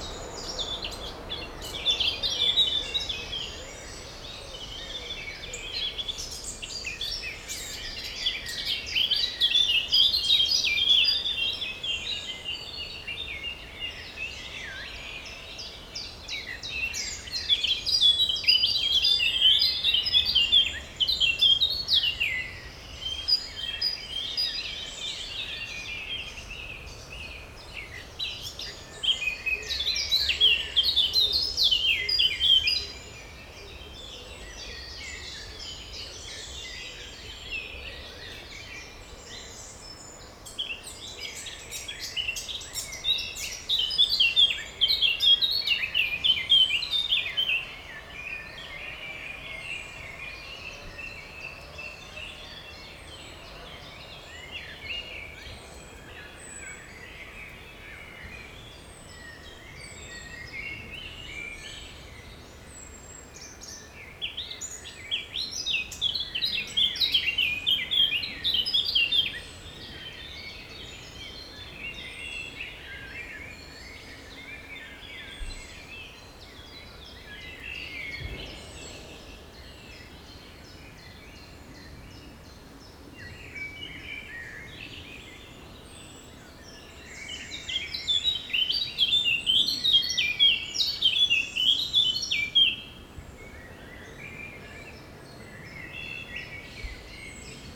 Binche, Belgium - On the spoil pile
On the huge spoil pile, Eurasian Blackcap concerto. Also people working in their home with a small bulldozer, massive planes coming from Charleroi airport and police driving on the road. If listening with an helmet, a special visitor on 19:21 mn ;-)
10 May 2018